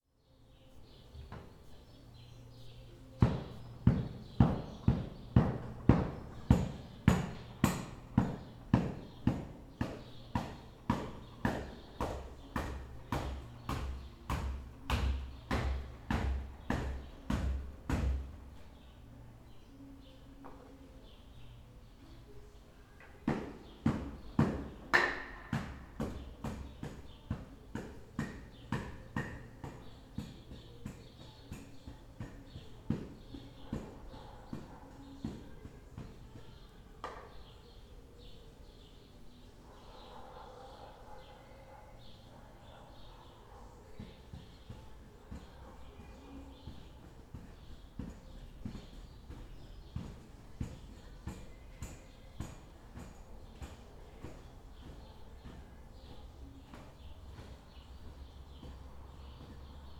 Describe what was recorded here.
my neighbour is cleaning his carpet after a party